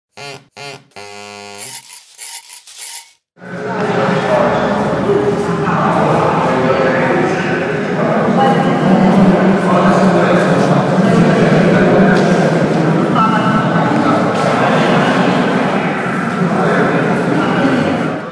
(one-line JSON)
{"title": "Zeleznicka stanica, (Train station) Belgrade", "date": "2011-06-15 17:44:00", "latitude": "44.81", "longitude": "20.46", "altitude": "77", "timezone": "Europe/Belgrade"}